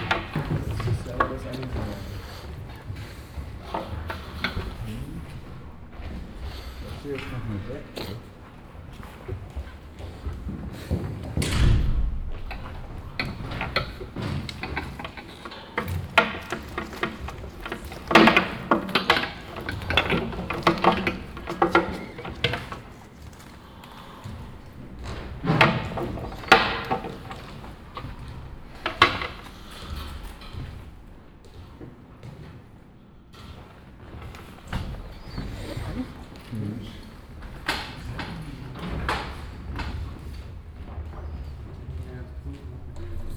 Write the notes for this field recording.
Inside the big stage theatre hall of the Schwankhalle. The sounds of a stage setup - metal pipes being moved and conversations of the stuff. soundmap d - social ambiences and topographic field recordings